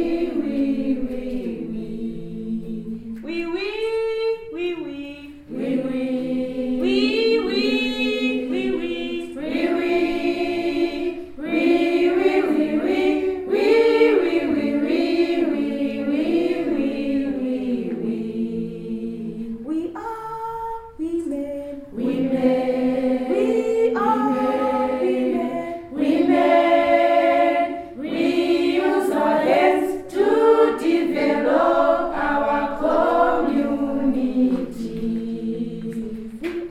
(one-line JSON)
{"title": "Sikalenge, Binga, Zimbabwe - We are women...!", "date": "2016-06-14 11:40:00", "description": "the women of Zubo's Sikalenge Women's Forum get up for a song after a long meeting... We are women...!\nZubo Trust is a women’s organization bringing women together for self-empowerment.", "latitude": "-17.69", "longitude": "27.46", "altitude": "593", "timezone": "GMT+1"}